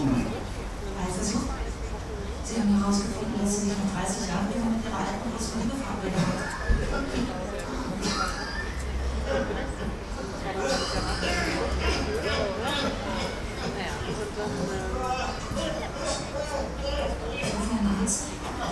ringo - public viewing: tatort
at sunday evenings, millions of germans are watching Tatort, a very popular crime thriller. more and more it becomes a public event, to go to your favorite pub or club to watch TV.
20 September 2009